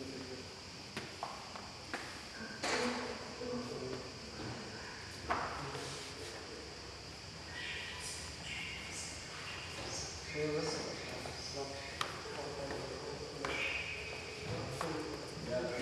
Venedig, Italien - Venice Biennale - Israelian Pavillion
At the Venice Biennale 2022 inside the Israelian Pavillion - the sound of the sound installation "The Queendome" by Ilit Azoulay. The artist casts off the restrictions of national and male representations and opens pathways into an interconnected Middle East. The Queendom, reigned by art, seems to have risen out of a total system crash. It is a rhizomatic realm, where stories coalesce.
international ambiences
soundscapes and art enviroments